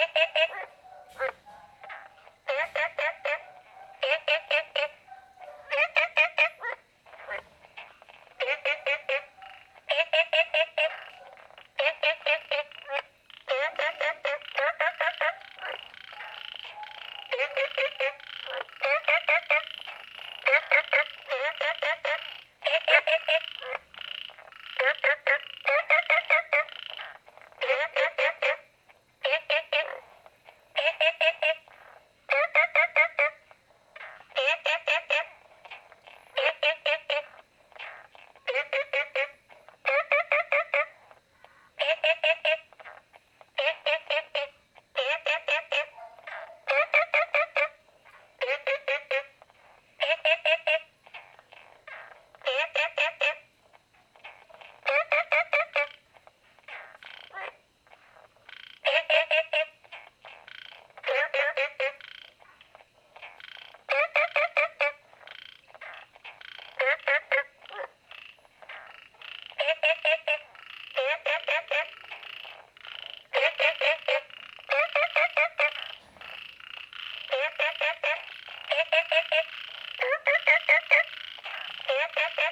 Frogs chirping, Small ecological pool, Different kinds of frog sounds
Zoom H2n MS+XY
Puli Township, Nantou County, Taiwan, June 2015